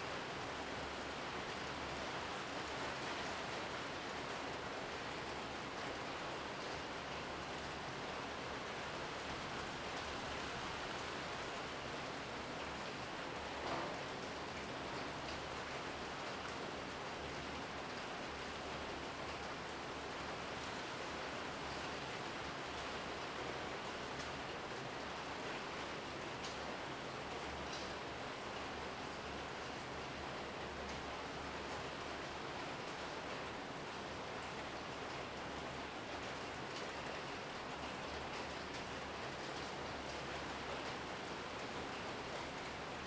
大仁街, Tamsui District - Rain and Thunder
Rain and Thunder
Zoom H2n MS+XY